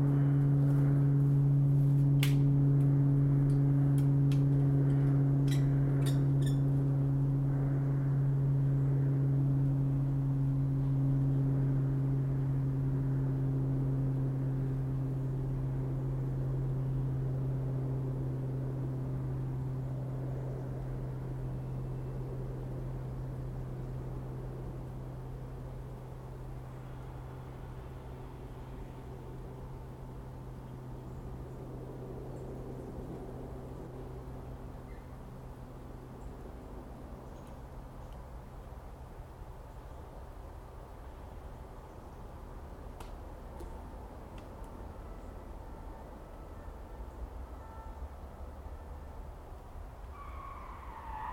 Jeanlouks spot - Daytime RAVeden
Helped some friends set up a rave, it was really fun! It brought back memories of what I would feel as a child building huts and campfires. Being surrounded by trees with the distant sound of the industrial zone and motorway was quite unusual too.
So basically this is a recording of us making constructions out of pallets and bamboo.
I made another recording from almost the same spot during the night.
Used a zoom H2n in 4ch mode and merged them with audacity
Occitanie, France métropolitaine, France, 25 October 2019